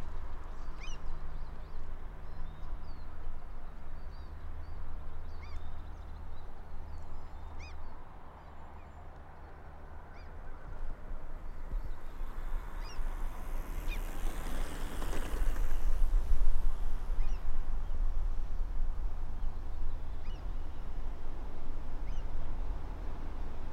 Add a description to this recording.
Gimonas CK annual cyling competition event. Day 1. Tempo/Time trail bicycles passing by. The predominant sounds are the carbon disc rear wheels giving the hollow sound. (condensed recording)